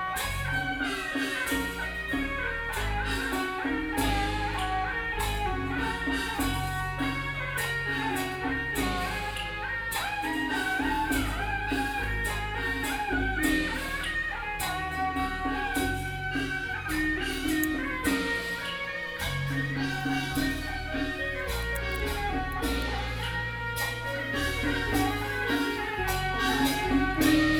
Beitou - Taiwanese Opera
Taiwanese Opera, Sony PCM D50 + Soundman OKM II
Taipei City, Taiwan, 21 July 2013, 15:06